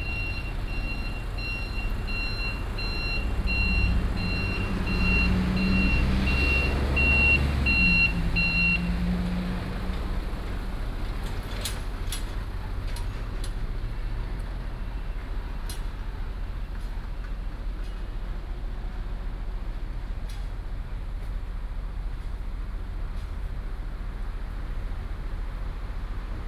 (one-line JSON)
{"title": "Sec., Jianguo S. Rd., Da'an Dist. - Walking on the road", "date": "2015-06-25 15:51:00", "description": "Traffic noise, Building site, Go into the convenience store, Pupils", "latitude": "25.04", "longitude": "121.54", "altitude": "13", "timezone": "Asia/Taipei"}